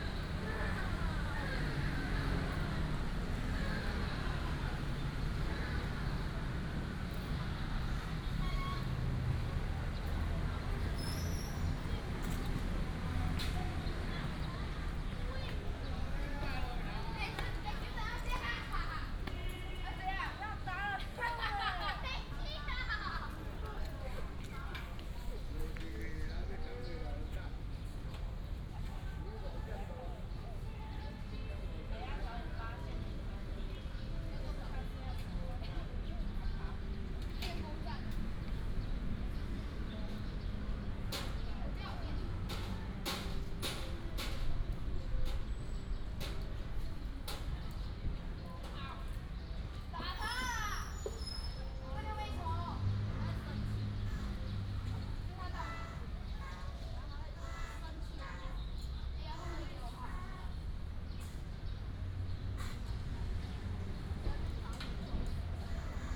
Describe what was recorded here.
Walking in the Park, Traffic sound, The plane flew through, sound of birds